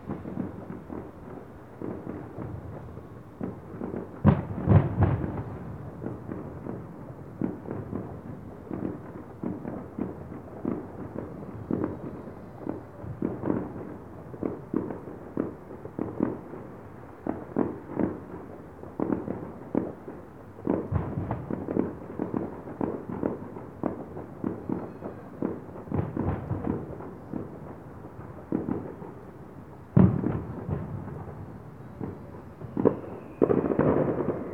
Montreuil, France - Sound of Fireworks on Bastille Day
Commemoration of the Bastille Day in Paris, 2016.
Sound of fireworks heard in Montreuil.
Zoom H4n
13 July 2016, 23:59